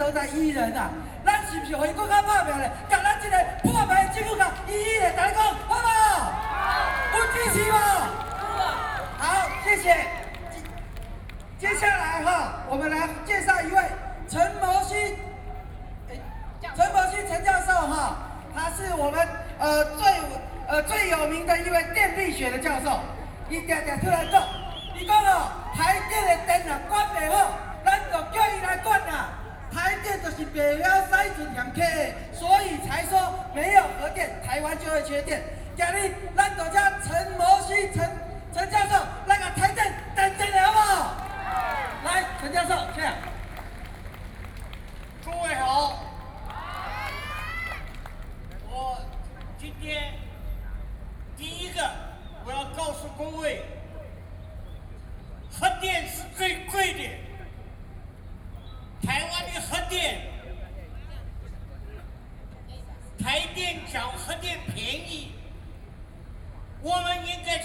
Ketagalan Boulevard, Taipei City - anti-nuclear protesters
anti-nuclear protesters, spech, Sony PCM D50 + Soundman OKM II